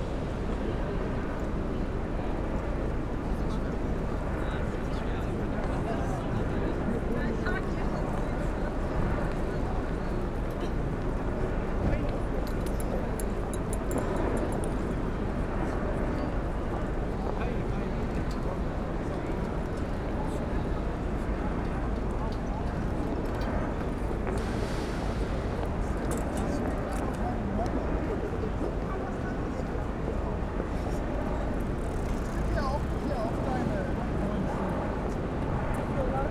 berlin: hermannplatz - the city, the country & me: 1st may riot
police cars, vans, trucks and water guns waiting on the revolution, chanting demonstrators, police helicopters, sound of police sirens and bangers, people leaving and entering the subway station
the city, the country & me: may 1, 2011